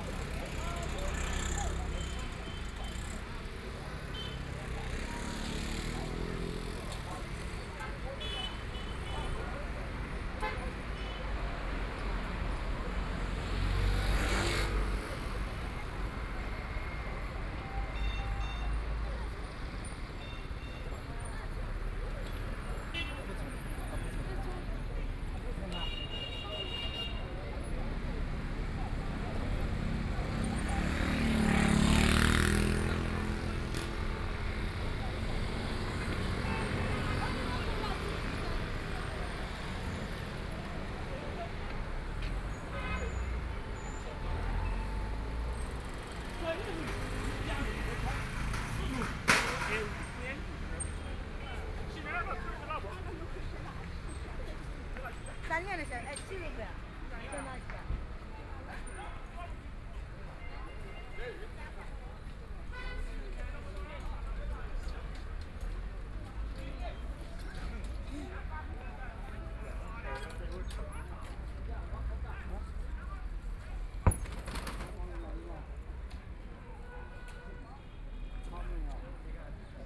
Fangbang Road, Shanghai - Walking through the old neighborhoods
Walking through the old neighborhoods, Market, Fair, The crowd gathered on the street, Voice chat, Traffic Sound, Binaural recording, Zoom H6+ Soundman OKM II
Shanghai, China